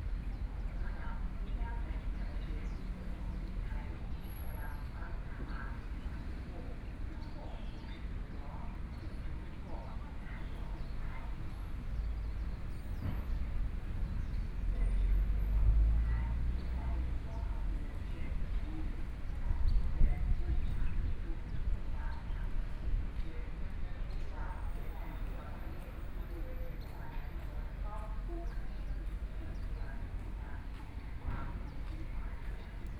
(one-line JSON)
{"title": "左營區埤東里, Kaohsiung City - Sitting in front of the square", "date": "2014-05-15 11:16:00", "description": "Sitting in front of the square, Birdsong sound, Hot weather, Tourist area, Traffic Sound", "latitude": "22.68", "longitude": "120.29", "altitude": "20", "timezone": "Asia/Taipei"}